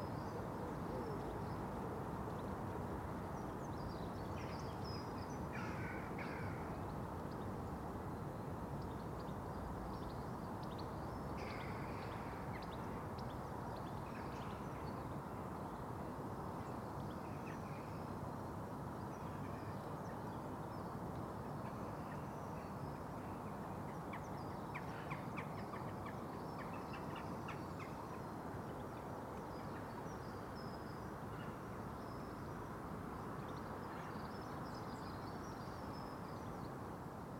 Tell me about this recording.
The Drive Moor Crescent Moor Road South Rectory Road, A chill wind gusts, in the early dawn, gulls cry above the street, A street-front hedge, dark green dotted with red, a gatepost rots